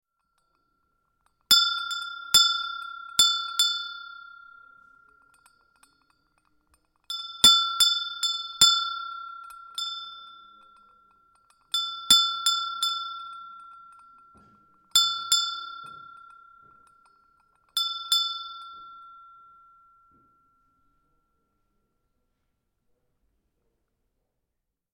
bonifazius, bürknerstr. - handglocke messing
21.01.2009 13:50 hübsche handglocke aus messing / cute brazen hand bell
Berlin, Deutschland